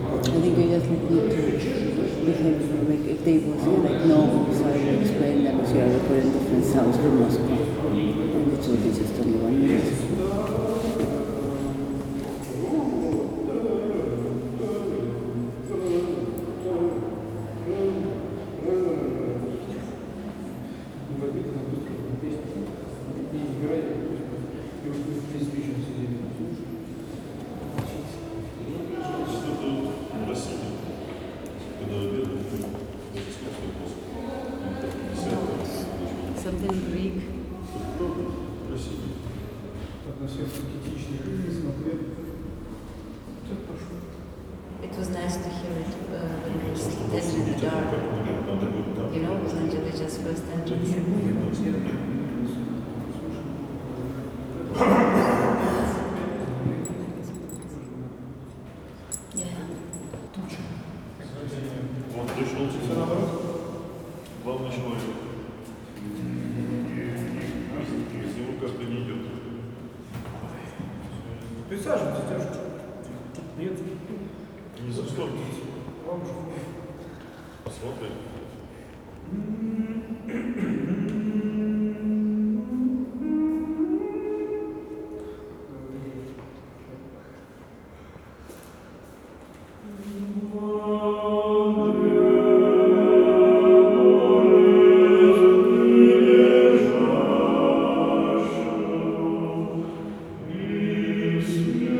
St. Basils Cathedral, Tverskoy District, Moscow, Russia - Men Chorus Surprise
Climbing through the tiny claycaves of this veryvery old orthodox christian cathedral, overly painted, repainted and decorated with colourful horror-film-like stiched, carved, drawn, gold framed oil-and frescopainted frowns, figures, gestures and situations we heard these voices in the dark. An accidental find of a men chorus, happily singing for litte audiences that squeeze all of a sudden in from before unseen corners.
Moskva, Russia, 10 July 2015, 14:30